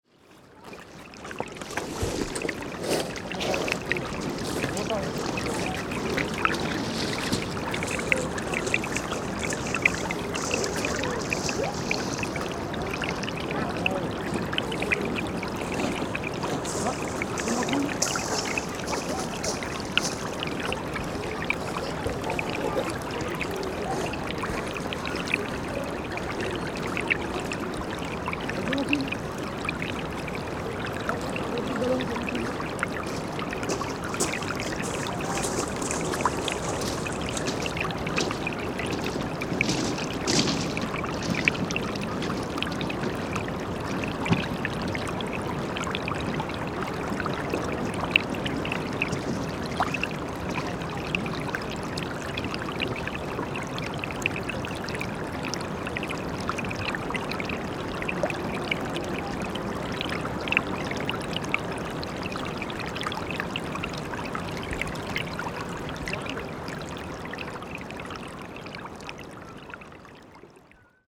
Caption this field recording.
People on the beach with little water streaming and smooth stones